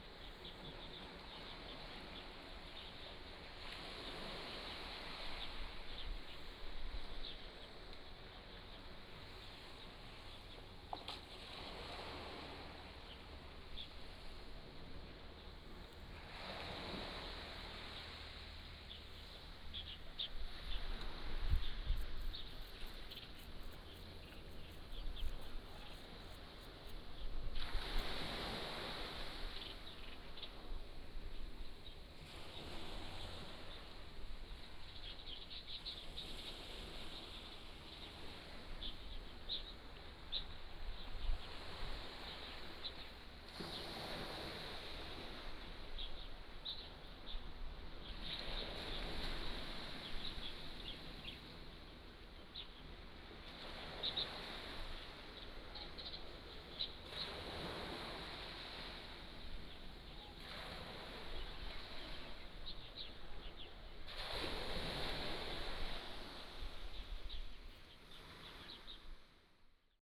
橋仔村, Beigan Township - Small beach
Small beach, Sound of the waves, Small fishing village